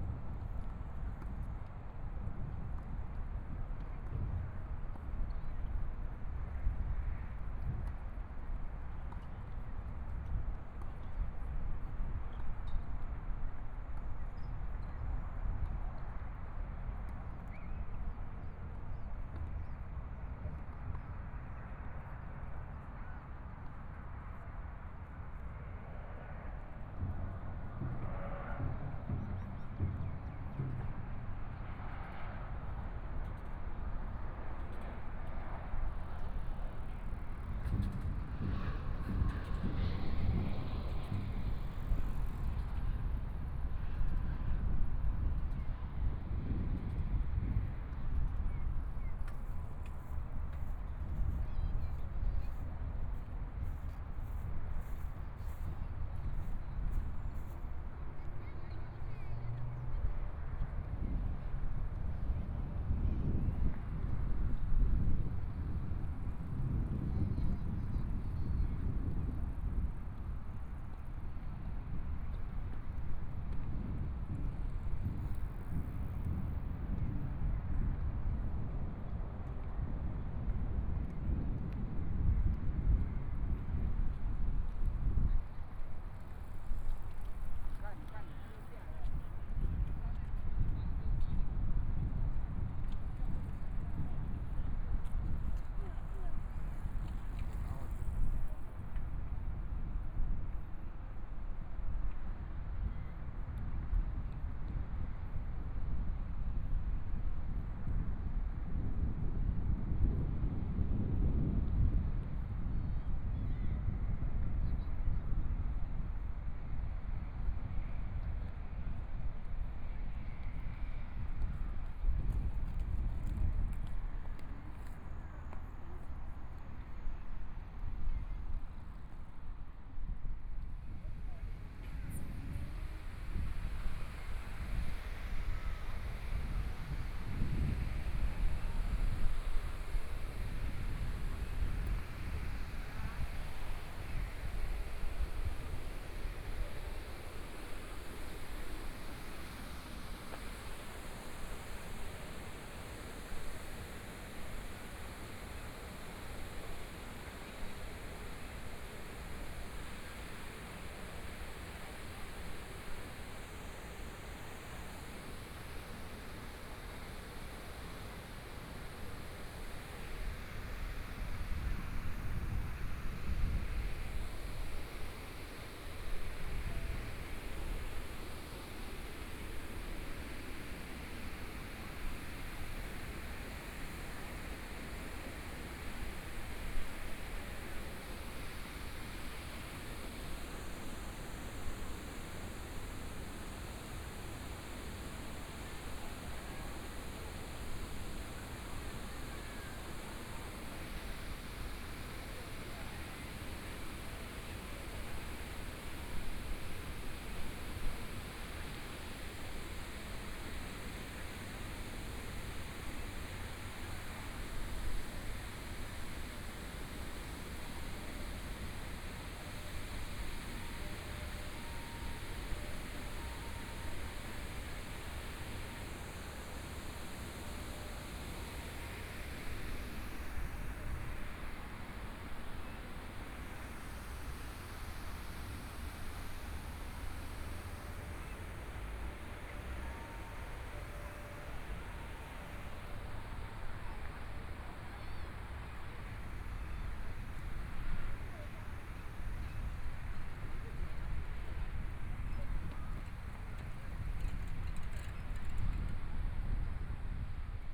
DaJia Riverside Park, Taipei City - walking in the Park
walking in the Park, Pedestrian, Traffic Sound, A lot of people riding bicycles through, Fountain
Binaural recordings, ( Proposal to turn up the volume )
Zoom H4n+ Soundman OKM II
Taipei City, Taiwan, 16 February 2014